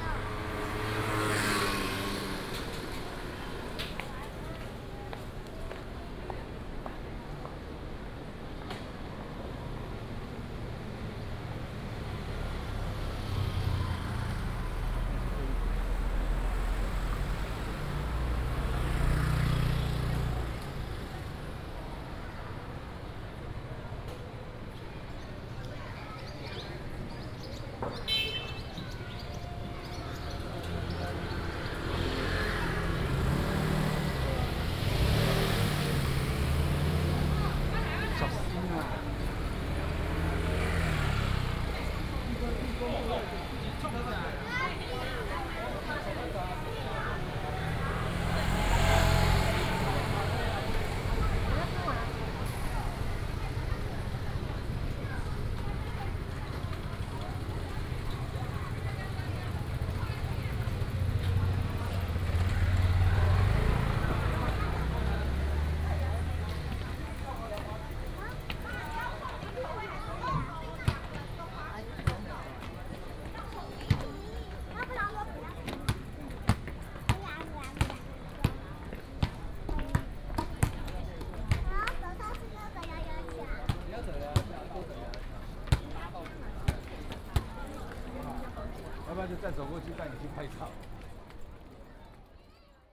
Xindian Rd., Xindian Dist., New Taipei City - walking in the Street
walking in the Street, traffic sound
Xindian District, New Taipei City, Taiwan, 25 July 2015